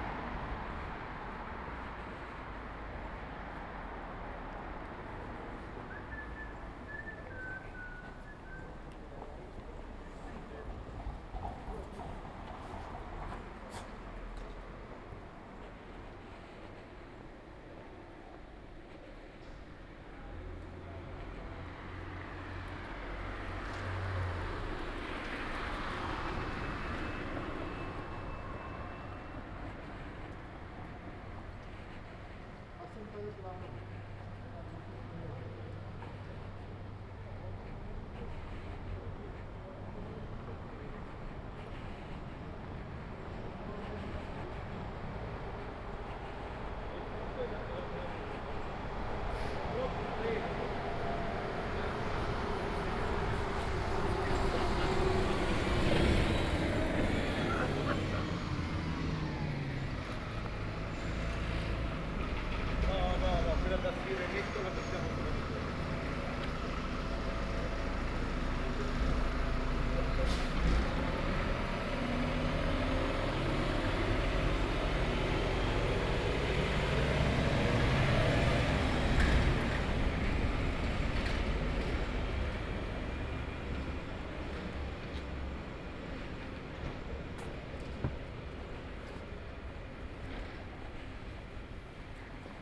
Ascolto il tuo cuore, città. I listen to your heart, city. Several chapters **SCROLL DOWN FOR ALL RECORDINGS** - Round Noon bells on Sunday in the time of COVID19 Soundwalk
"Round Noon bells on Sunday in the time of COVID19" Soundwalk
Chapter XVIII of Ascolto il tuo cuore, città. I listen to your heart, city
Sunday, March 22th 2020. San Salvario district Turin, walking to Corso Vittorio Emanuele II and back, twelve days after emergency disposition due to the epidemic of COVID19.
Start at 11:45 p.m. end at 12:20p.m. duration of recording 35'30''
The entire path is associated with a synchronized GPS track recorded in the (kmz, kml, gpx) files downloadable here:
Piemonte, Italia, 22 March 2020